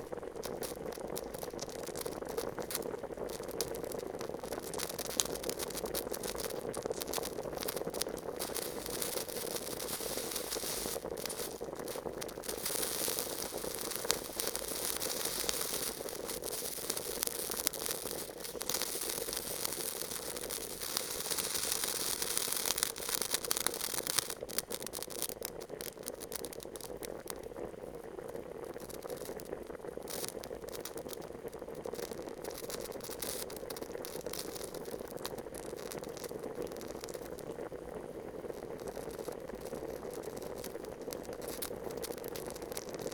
Poznan, Mateckiego street, kitchen - boiling eggs
boiling eggs in a small pot, drops of water trapped under the pot sizzling as they turn into vapor as well as rumble of boiling water as if a horde of horses were racing in the distance.